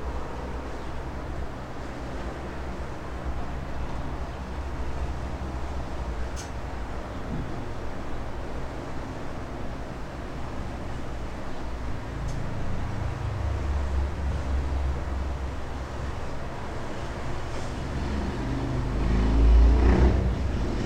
Galatas, Crete, church in the jetty
inside the little church on a jetty
Galatas, Greece